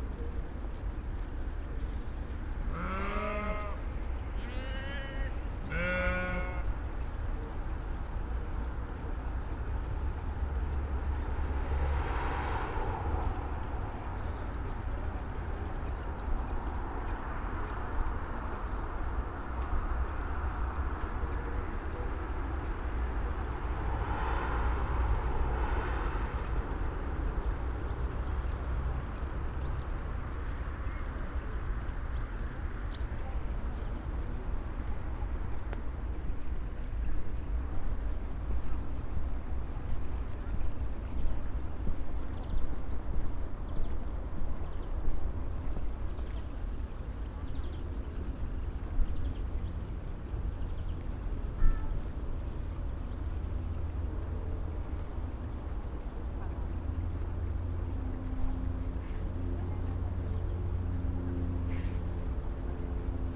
V Drago, Maribor, Slovenia - barking versus bleating
grazing, barking, bleating, coughing, croaking